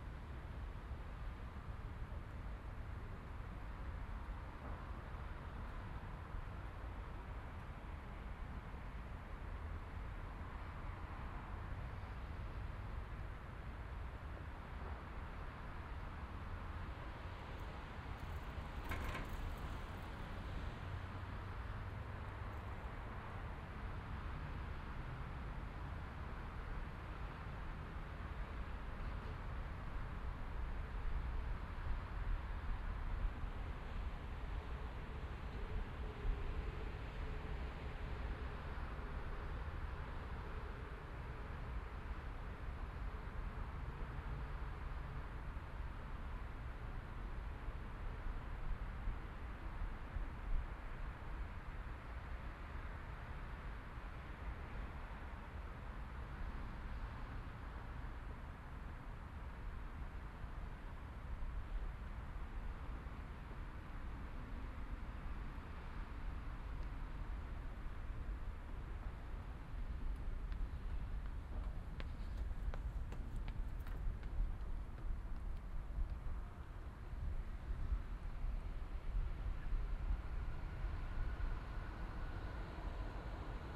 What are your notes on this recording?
soundmap: cologne/ nrw, project: social ambiences/ listen to the people - in & outdoor nearfield recordings